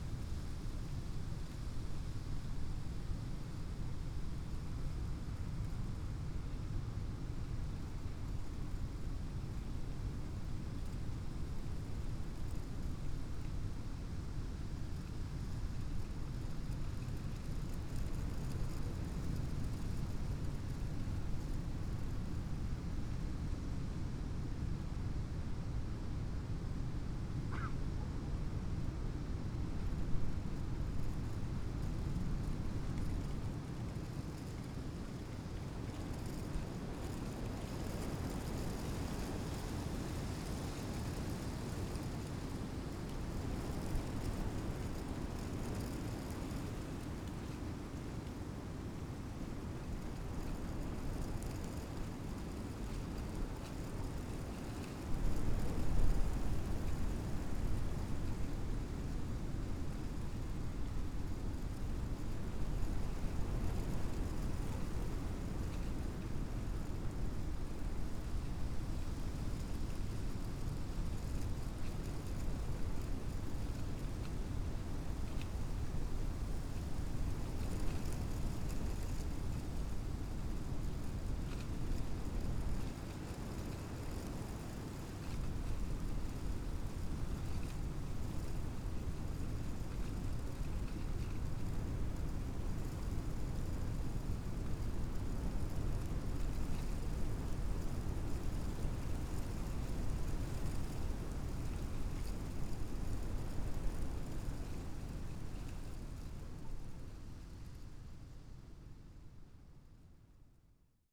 groß neuendorf, oder: river bank - the city, the country & me: oak tree
stormy afternoon, leaves of an old oak tree rustling in the wind, barking dog in the distance
the city, the country & me: january 3, 2015